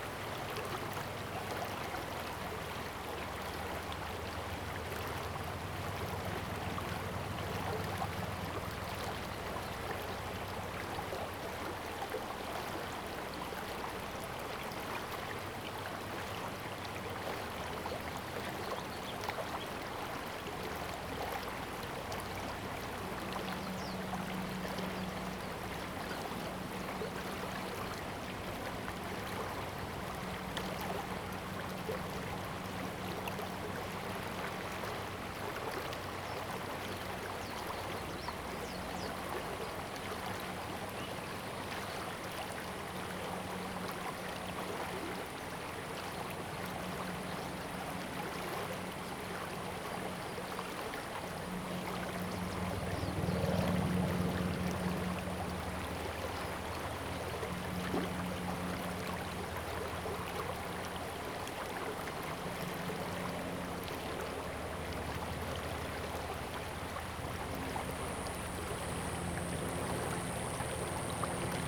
TaoMi River, 桃米里 - In streams
Stream, Bird sounds
Zoom H2n MS+XY
Puli Township, 水上巷